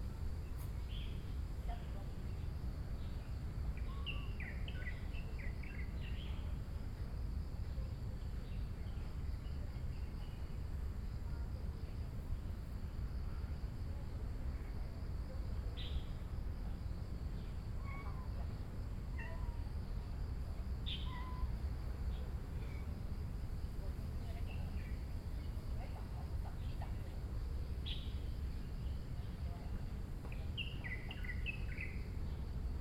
北投行天宮.Taipei City - birds, cat